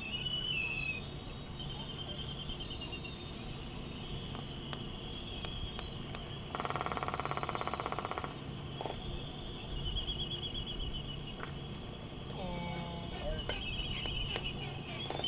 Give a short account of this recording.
Sand Island ... Midway Atoll ... laysan albatross dancing ... Sony ECM 959 stereo one point mic to Sony Minidisk ... background noise ...